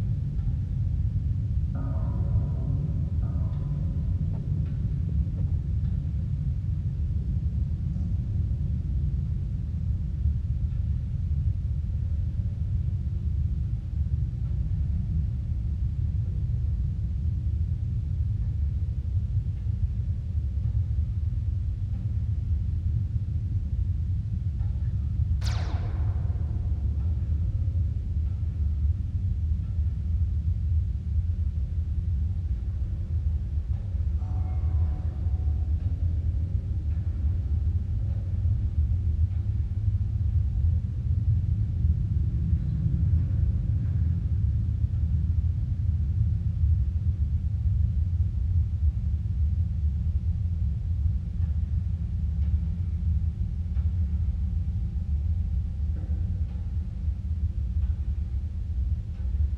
rooftop wires, Riga
sounds of long wires stretched across rooftops. recorded with contact mics